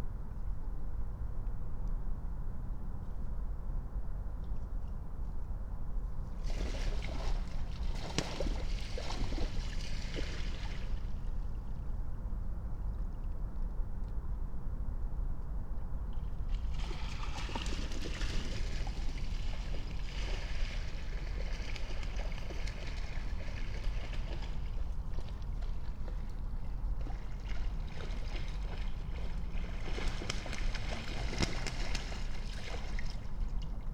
Berlin, Königsheide, Teich - pond ambience
23:09 Berlin, Königsheide, Teich
(remote microphone: AOM 5024HDR/ IQAudio/ RasPi Zero/ 4G modem)
Deutschland